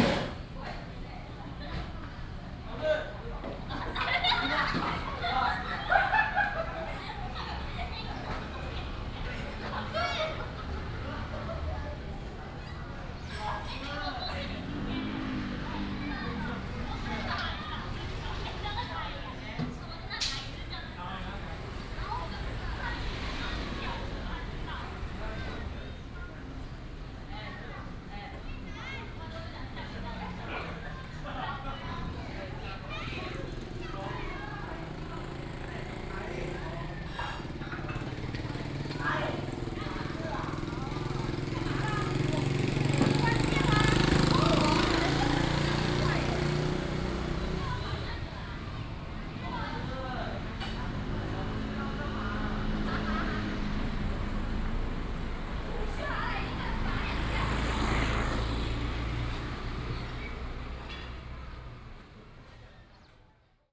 Nanxing St., Beipu Township, 新竹縣 - In the shop street
In the shop street, Tourists, The store is finishing the cleaning, Binaural recordings, Sony PCM D100+ Soundman OKM II
Hsinchu County, Taiwan, 24 September 2017, ~19:00